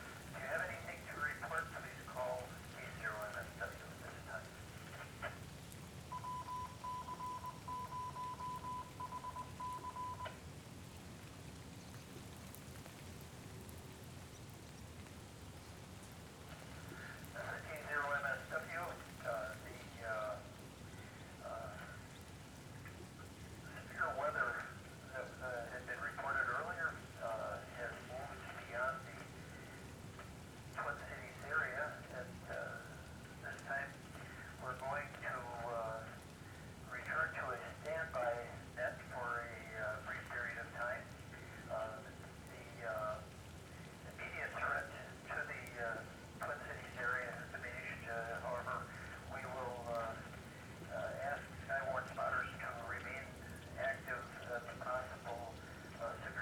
Waters Edge - Watching a Storm Come in
Listen along as I watch a mid morning severe warned storm come through. Theres some wind initially then a hard rain falls. The local Skywarn net can be heard from my radio. Fortunately there was no hail or damage.